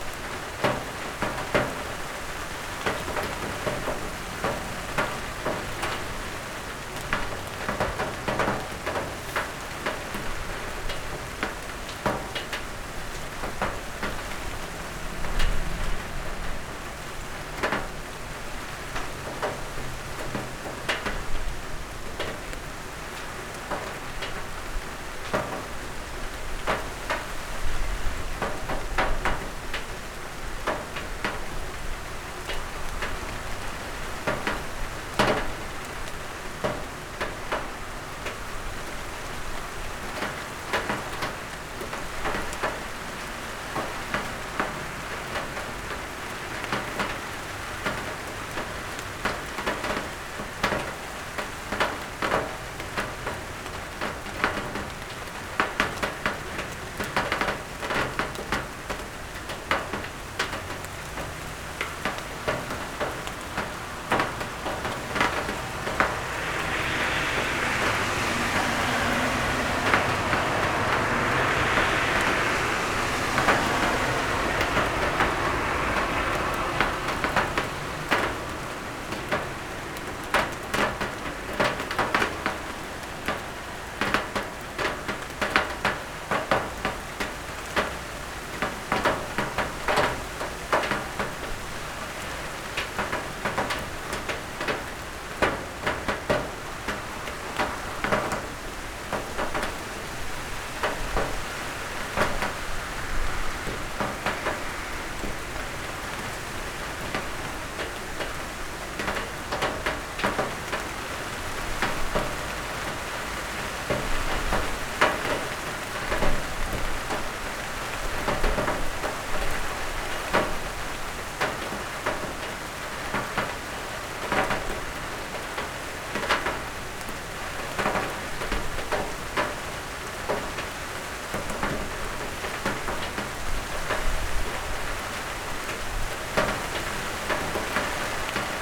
Rainy day in Oulu. Pretty late at night so fewer cars driving by, luckily. Zoom H5, default X/Y module
Pohjois-Pohjanmaa, Manner-Suomi, Suomi